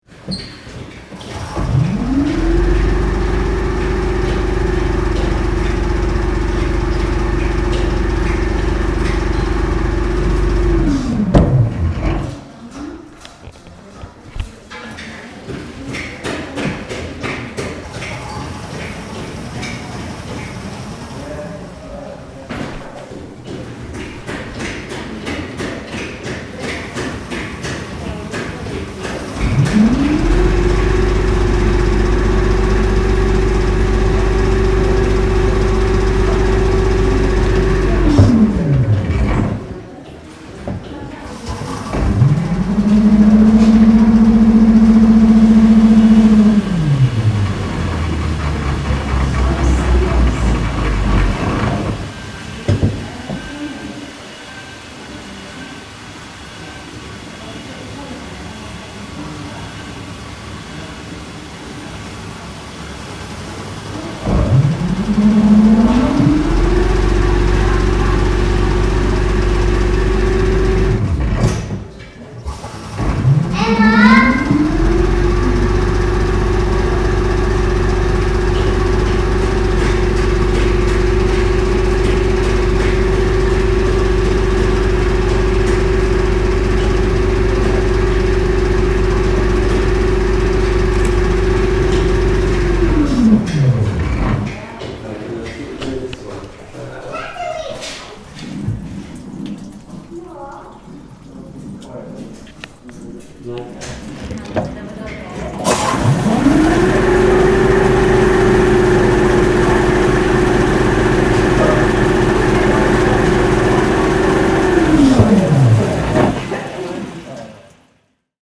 Cragside pump house
demonstration pump at Cragside - the first home in the world to have hydroelectric power.